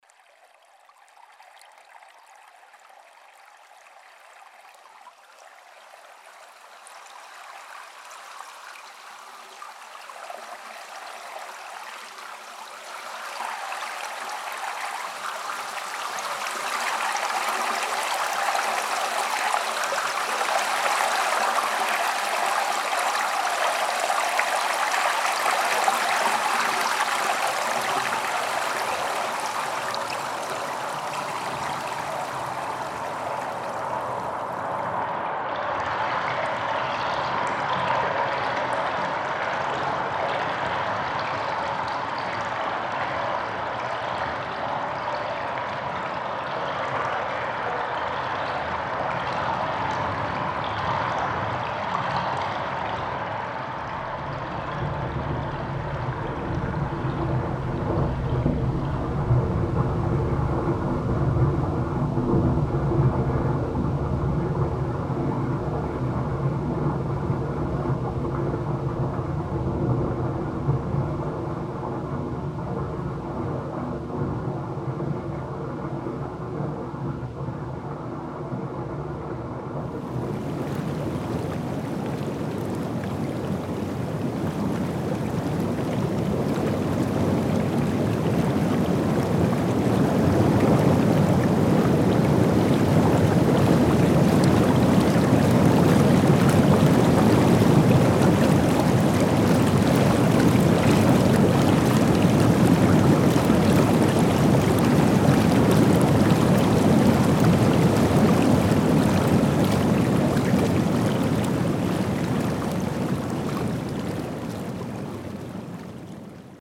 {
  "title": "erkrath, hochdahlerstr, neanderbad",
  "description": "collage aus aufnahmen im schwimmbad innenbereich des neanderbades - abläufe, zuläufe, filteranlagen\nsoundmap nrw/ sound in public spaces - social ambiences - in & outdoor nearfield recordings",
  "latitude": "51.22",
  "longitude": "6.93",
  "altitude": "124",
  "timezone": "GMT+1"
}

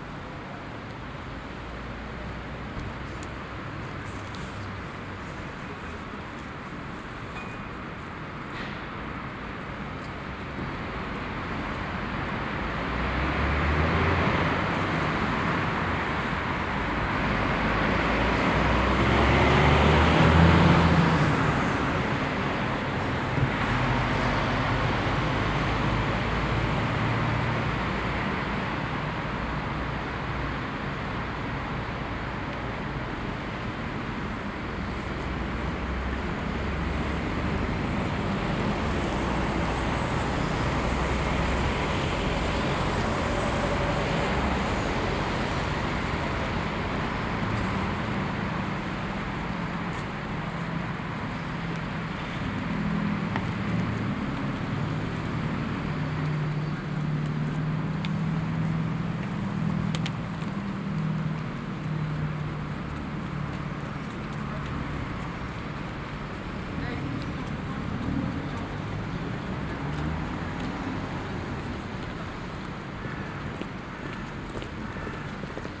{
  "title": "Hôpital Sainte-Élisabeth, Uccle, Belgique - Parking ambience",
  "date": "2022-01-14 14:50:00",
  "description": "Cars on the avenue, in the parking, some people passing by, a raven at 4'33.\nTech Note : SP-TFB-2 binaural microphones → Sony PCM-D100, listen with headphones.",
  "latitude": "50.81",
  "longitude": "4.37",
  "altitude": "118",
  "timezone": "Europe/Brussels"
}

